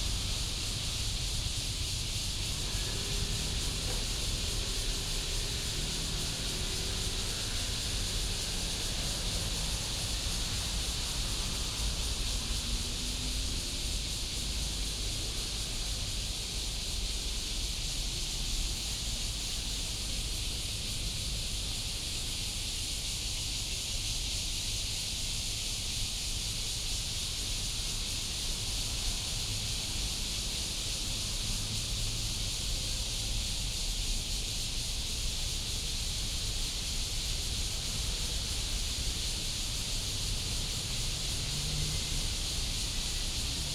五權公園, 中壢區正大街 - Cicada cry
in the park, Cicada cry, traffic sound